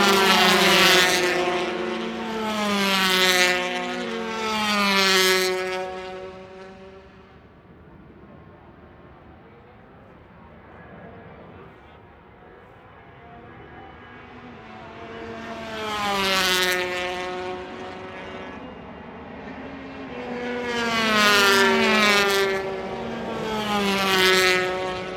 Unnamed Road, Derby, UK - British Motorcycle Grand Prix 2004 ... 125 Qualifying ...
British Motorcycle Grand Prix 2004 ... 125 Qualifying ... one point stereo mic to minidisk ... date correct ... time optional ...
23 July